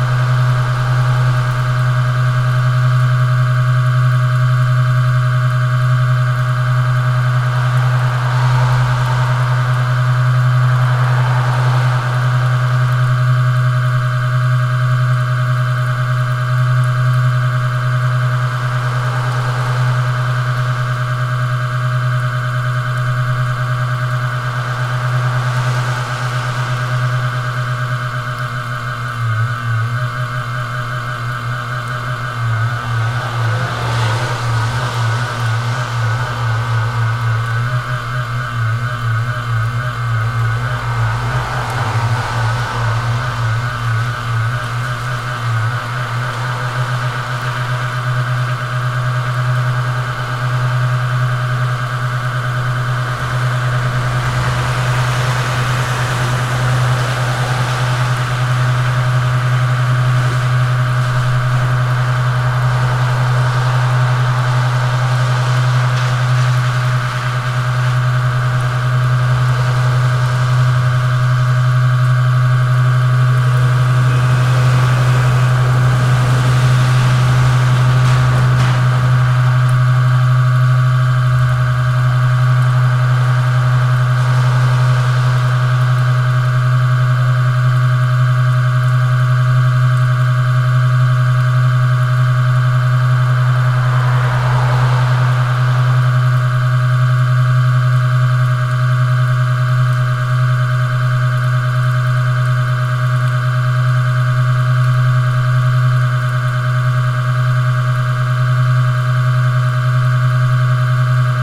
Jonavos g., Kaunas, Lithuania - LED signal board hum
A close proximity recording of LED signal board box near an industrial building courtyard entrance. A rhythmic hum sometimes breaks down into a glitchy weird sound and then comes back into an engine-like groove. Traffic passing by can be heard as well. Recorded with ZOOM H5.